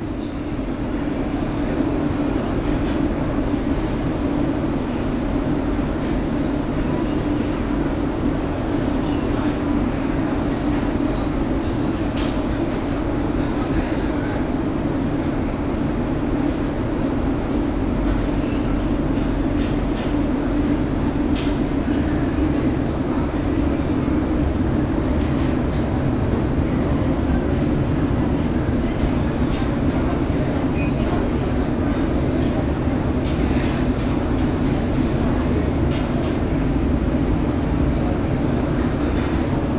Kallang, Singapur, Lavender rd. - drone log 15/02/2013 lavender food square
food night marked, ventilation and atmosphere
(zoom h2, binaural)
Singapore, 15 February 2013